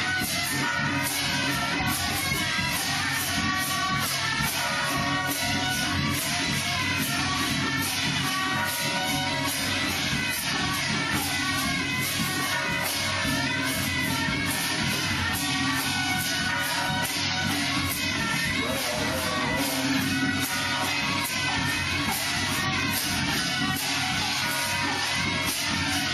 Traditional temple festivals, Sony ECM-MS907, Sony Hi-MD MZ-RH1